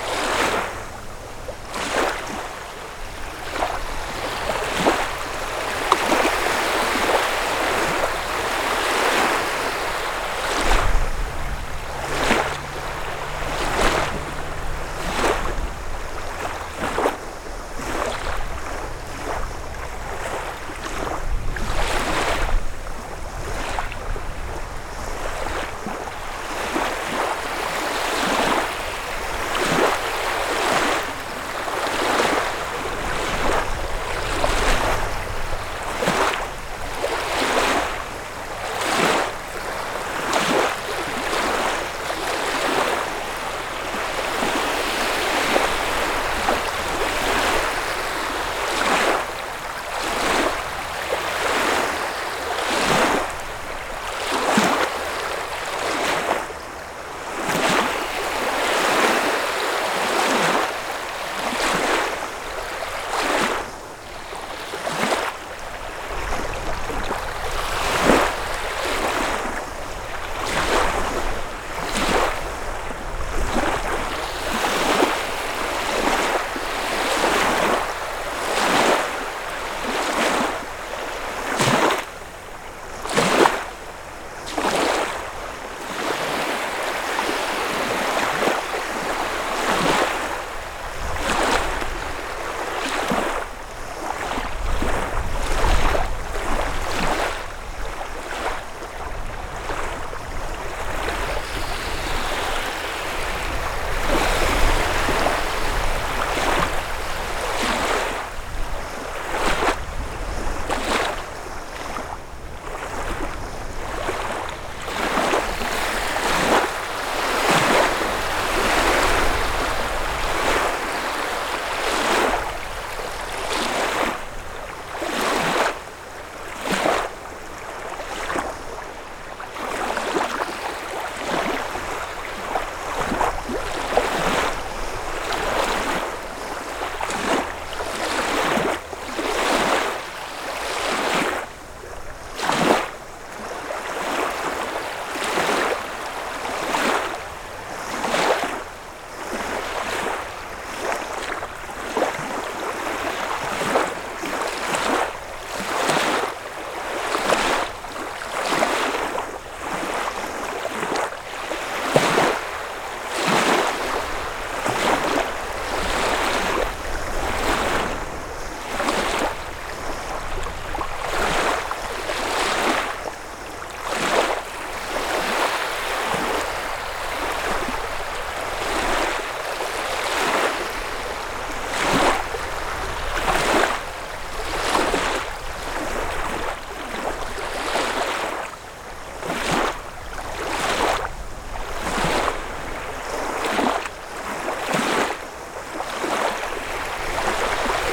Klebark Wielki, Jezioro jesienne - waves on a lake 'Klebarskie'
Waves on a lake in a windy day of autumn.